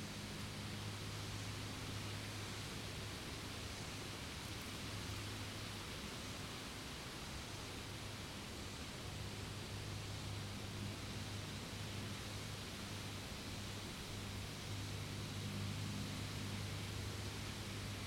Bluff View, Glencoe, Missouri, USA - Bluff View
Symphony of cicadas, wind blowing through the trees, airplanes and murmurs